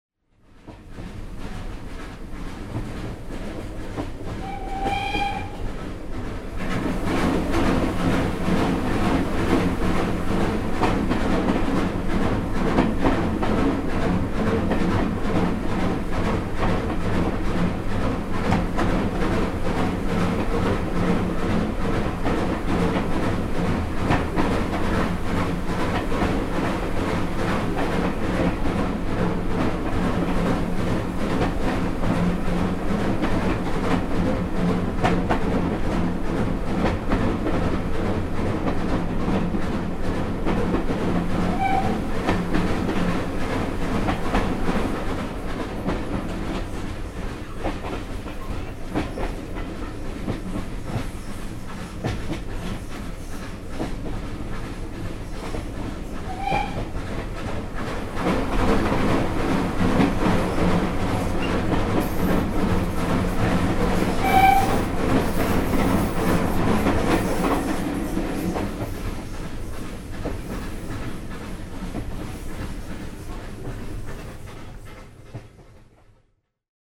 Summerseat Railway Tunnel - Interior of Steam Train
Interior of an East Lancashire Railway Steam Train as it passes through a tunnel shortly after leaving Summerseat Station.
United Kingdom, European Union, 12 June 2010